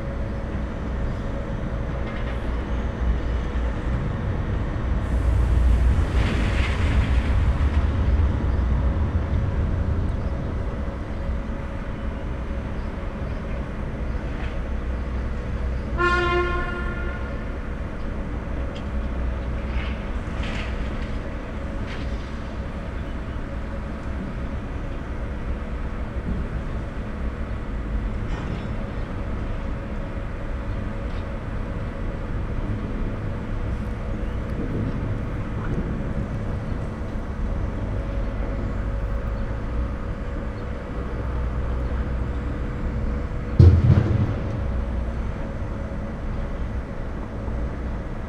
industrial ambience at Unterhafen, Neukölln, near scrapyard. there are some companies in this area busy with recycling and waste disposal.
(Sony PCM D50, DPA4060)
30 May 2013, 14:30